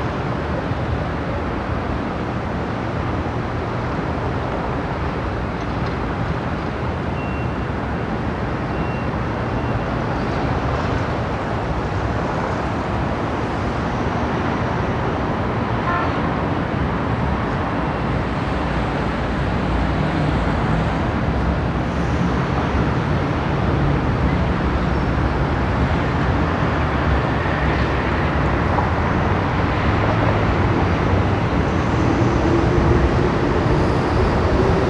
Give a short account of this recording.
afternoon traffic at frankfurt city near the fair, soundmap d - social ambiences and topographic field recordings